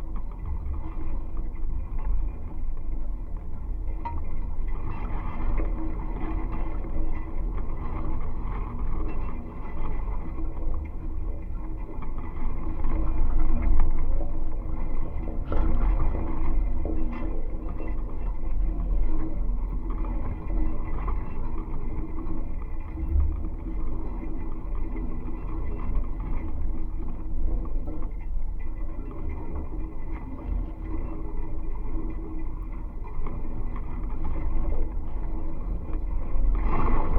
Kušnieriūnai, Lithuania, cemetery
small cemetery, fallen metallic cross, magnetic contact microphones